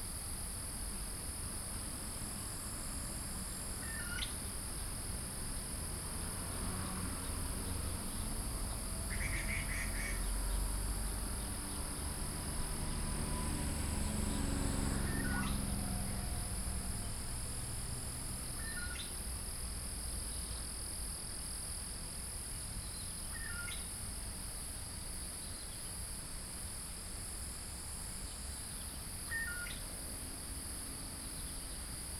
青蛙阿婆家, Taomi Ln., 埔里鎮 - Bird calls
Bird calls, Insects sounds